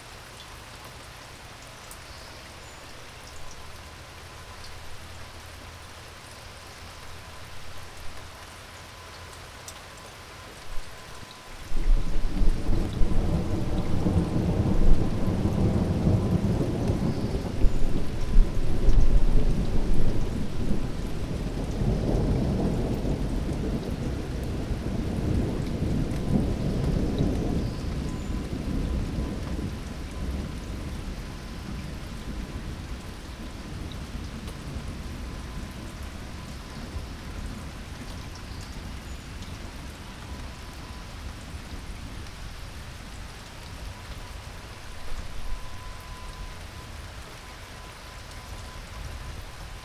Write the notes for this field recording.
Nobody will EVER top Michael Oster's "Suburban Thunder" for the absolute best recording of a thunderstorm: Nevertheless, when a front blew through this morning, it announced itself with a clap of thunder so massive that it shook my house to its foundations and scared the shit out of me. I knew I HAD to try to get a piece of it. It's not in any danger of unseating Oster, but there were some nice rolling tumblers up high in the atmosphere, and on a big stereo the subsonic content is palpable. Major elements: * Birds, * Thunder, * Rain hitting the dry gutters, * 55-in. Corinthian Bells wind chimes, * A distant dog, * Distant leaf blowers, * Aircraft, * Cars and a truck, Here's an interesting thing. Another Radio Aporee user, "Cathartech" (AJ Lindner), caught the very same thunderstorm as me: He says he started his recording at 7:50 a.m., while mine started at 8:45 a.m., some fifty-five minutes later.